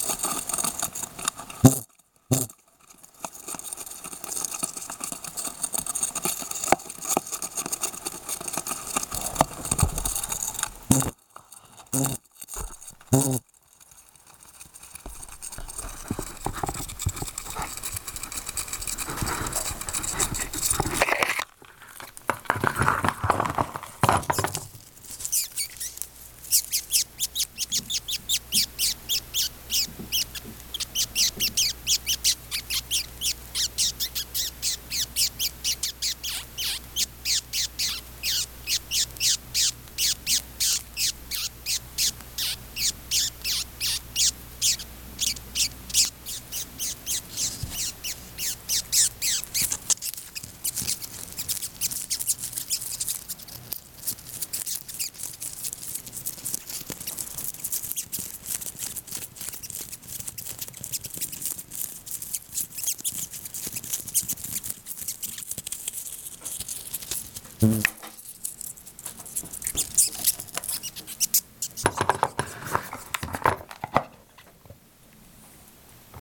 sound of noisy and exotic beatle, São Sebastião da Grama - SP, Brasil - sound of noisy and exotic beatle
Paisagem Sonora:
This soundscape archive is supported by Projeto Café Gato-Mourisco – an eco-activism project host by Associação Embaúba and sponsors by our coffee brand that’s goals offer free biodiversity audiovisual content.
Recorded with a Canon DlSR 5d mark II
We apreciare a lot your visit here. Have fun! Regards
Região Sudeste, Brasil, 18 March 2022